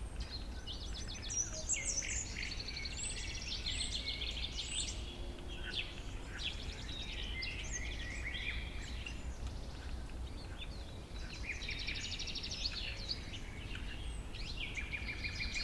Valonsadero, Soria, Spain - Paisagem sonora do Parque Natural de Valonsadero - A Soundscape of Valonsadero Natural Park
Paisagem sonora do Parque Natural de Valonsadero em Soria, Espanha. Mapa Sonoro do Rio Douro. Soundscape of Valonsadero Natural Park in Soria, Spain. Douro river Sound Map.
12 February 2012